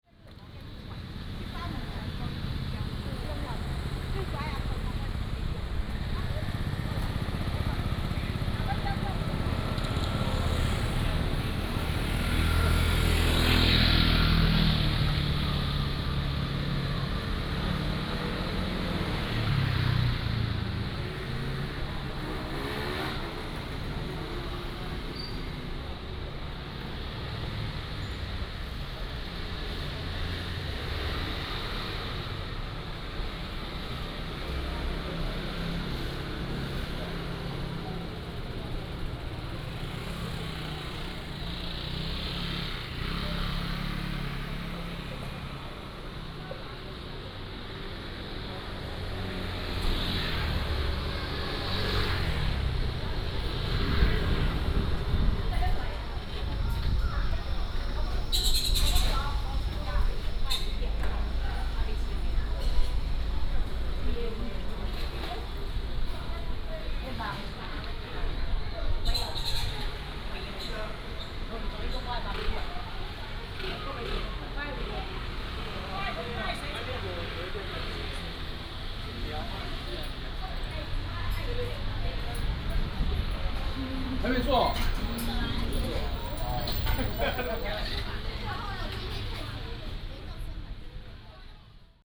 Zhongxing Rd., Jincheng Township - Walking in the Street
Walking in the Street, Traffic Sound